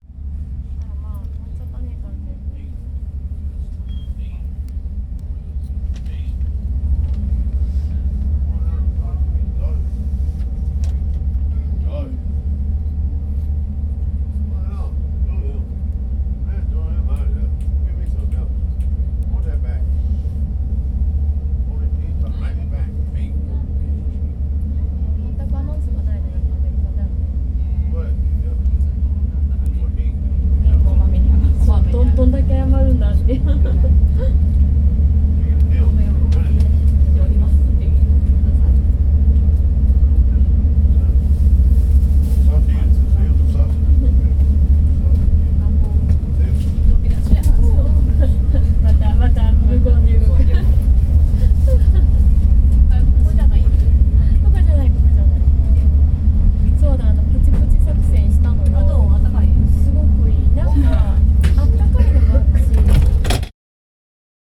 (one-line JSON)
{"title": "wicker park, Chicago, IL, USA - subway blue line", "date": "2013-11-09 07:00:00", "description": "wating for the doors to open in Damen & Milwaukee blue line train, people talking with each other and with themselves.", "latitude": "41.91", "longitude": "-87.68", "altitude": "183", "timezone": "America/Chicago"}